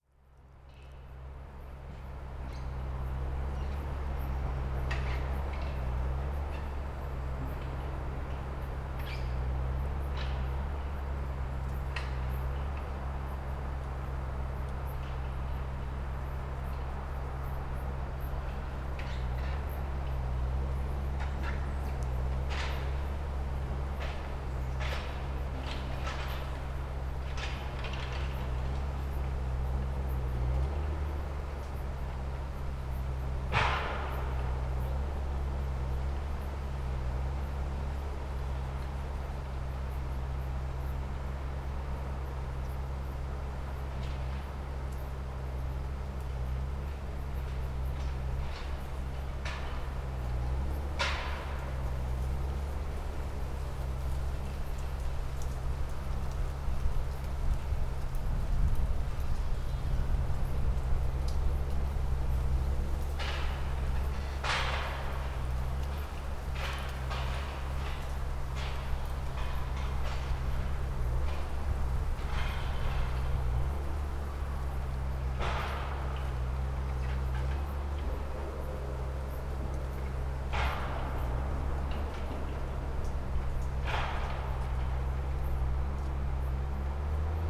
Rheinfelden, Park in the northern city limits - forest works near the A98 construction site
clearing works in the forest. tractor equipped with garbing module, moving cut-down trees on a trailer and pulling out tangled bushes. further in the distance sounds of a bigger machinery working on a patch of expressway - the A98. this part of the express way will cut off two villages (Minseln and Krasau) from each other and it's a point of concern for the inhabitants as they want to keep in direct contact. As far as I know there are talks about a tunnel but not much is being decided on.
Rheinfelden, Germany, 9 September, 15:27